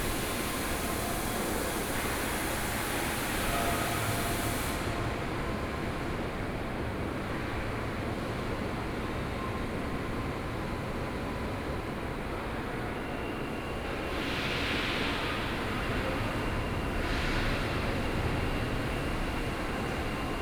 富岡機廠, Yangmei City - In the railway factory
In the railway factory
Taoyuan County, Taiwan, August 2014